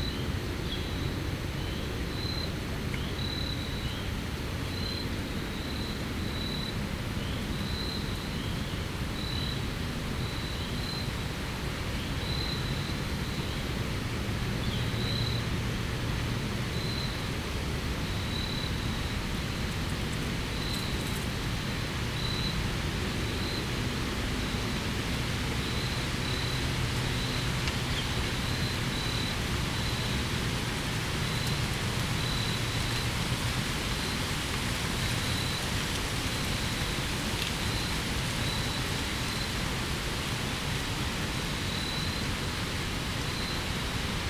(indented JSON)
{
  "title": "Al Foster Trail, Eureka, Missouri, USA - Al Foster Southern Terminus",
  "date": "2020-10-31 16:48:00",
  "description": "Wind rustling through dry fall foliage on the bank of the Meramec River at the current southern terminus of the Al Foster Trail near Rebel Bend – a large crescent shaped curve in the river. During the Civil War this area was said to provide the best route for secessionists to travel back and forth from St. Louis to the south.",
  "latitude": "38.54",
  "longitude": "-90.63",
  "altitude": "134",
  "timezone": "America/Chicago"
}